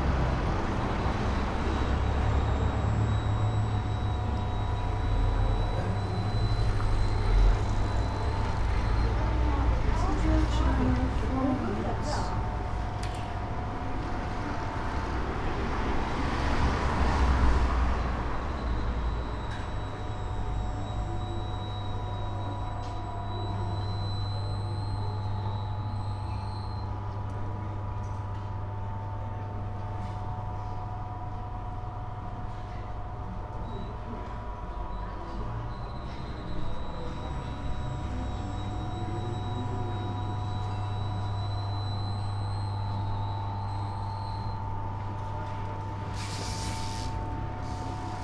sound of a rotating advertising, entrance of a bookstore, Köln
(Sony PCM D50, Primo EM172)
Köln, Germany, 7 January 2018